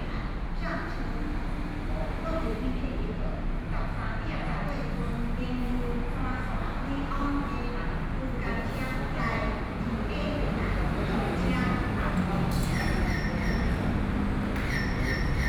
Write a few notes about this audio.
Station hall, Broadcast station message, Sony PCM D50 + Soundman OKM II